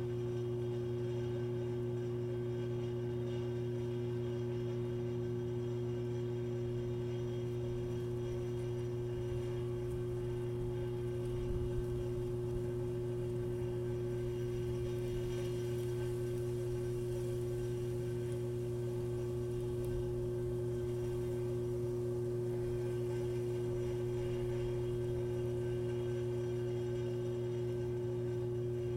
Greentree Transmission Tower, St. Louis, Missouri, USA - Greentree Transmission Tower
Combined MS stereo and coil pick-up recording under power line transmission tower of overhead power lines and electric substation. Coil pick-up silenced from recording at 1 min.